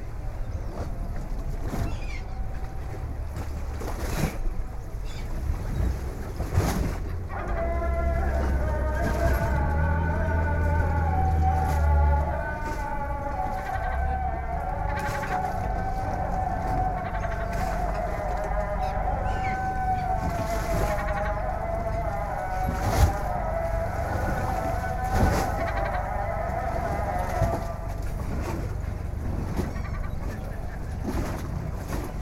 Bosphorus sciabordio
Anadolukavagi, a small fishing village. Lapping of the waves, seagulls, the song of the muezzin
31 December 2010